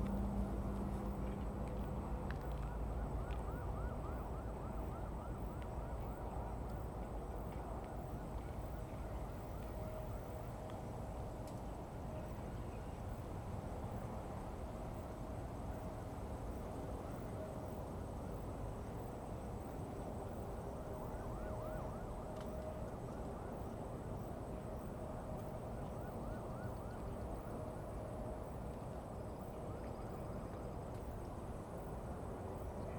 三湖村, Xihu Township - Near the high speed railway
Near the high speed railway, There is a sound from the highway, Police car sound, Dog sounds, High-speed railway train passing through, Bird call, Zoom H2n MS+XY
Xihu Township, 119縣道, 19 September 2017